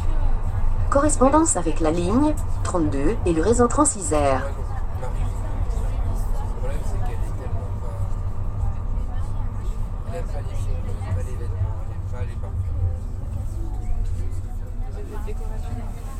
Agn s at work Notre Dame/Musée RadioFreeRobots